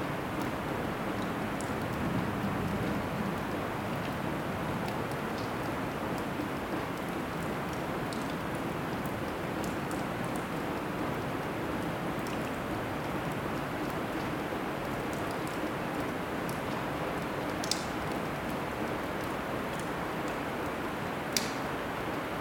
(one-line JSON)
{"title": "Rue Devant les Grands Moulins, Malmedy, Belgique - Morning ambience", "date": "2022-01-03 08:00:00", "description": "Drone from the air conditionning system, water drops and a few birds.\nTech Note : Sony PCM-D100 internal microphones, wide position.", "latitude": "50.43", "longitude": "6.03", "altitude": "348", "timezone": "Europe/Brussels"}